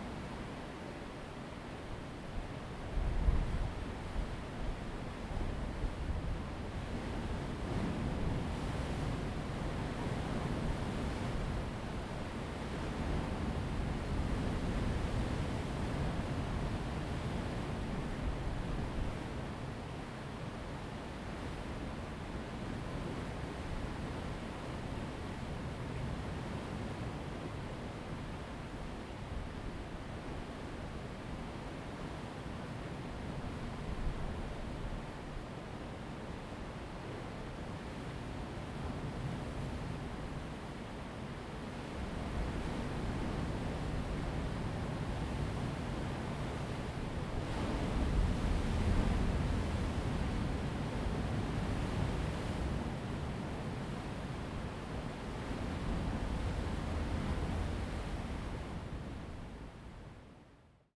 On the coast, hiding in the Rocks, Sound of the waves
Zoom H2n MS +XY